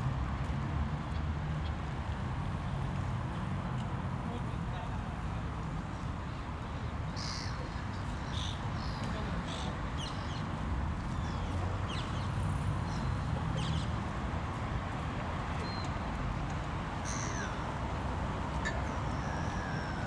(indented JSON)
{
  "title": "Heemraadssingel, Rotterdam, Netherlands - Birds at Heemraadssingel",
  "date": "2022-01-18 14:00:00",
  "description": "A very active group of different types of birds. It is also possible to listen to passersby walking on the wet gravel.",
  "latitude": "51.91",
  "longitude": "4.46",
  "altitude": "3",
  "timezone": "Europe/Amsterdam"
}